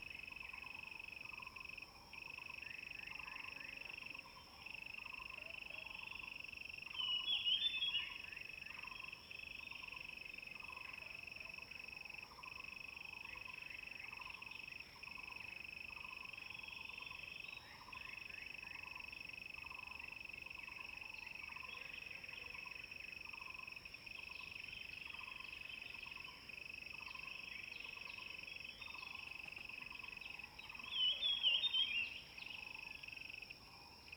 華龍巷, 五城村, Yuchi Township - birds and Insects sounds
birds and Insects sounds, in the woods
Zoom H2n MS+XY
Yuchi Township, 華龍巷43號, 26 April